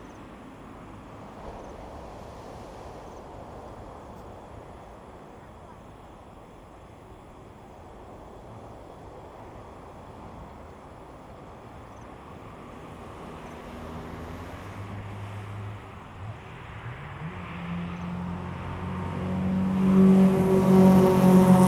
華源村, Taimali Township - the waves
Traffic Sound, Sound of the waves
Zoom H2n MS +XY
Taitung County, Taiwan, 5 September 2014, ~20:00